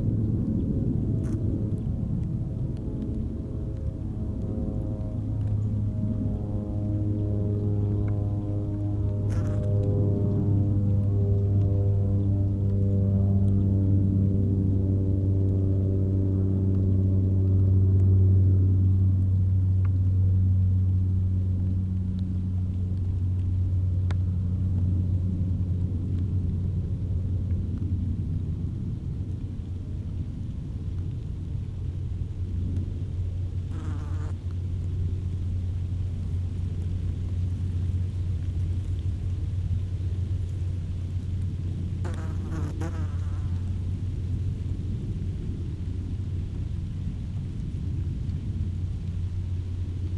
Mariánské Radčice, Tschechische Republik - Meadow near Libkovice
Unknown crackling in the beginning(ants?), then a powered glider starts to spin around.